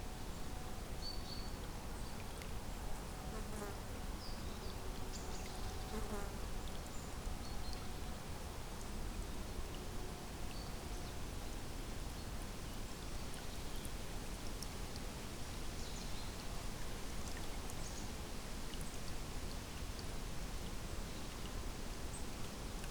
Lithuania, Azuolpamuse, mound
on the Azuolpamuse mound
12 September 2010